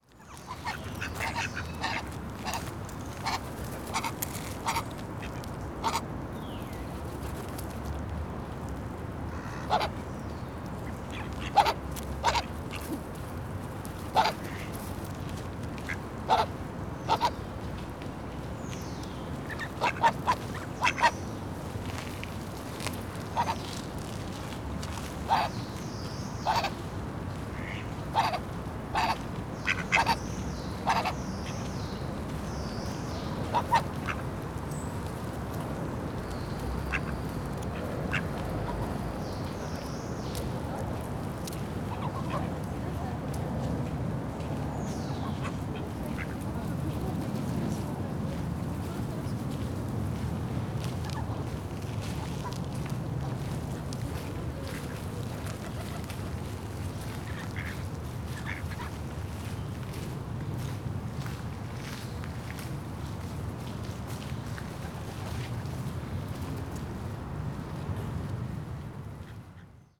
Porto, Jardins do Palácio de Cristal do Porto - ducks
a few ducks walked across one of the pathways in the park. the recorder draw their attention for a brief moment. they jabbered around it and continued their way. calls of other birds living in the park in the background.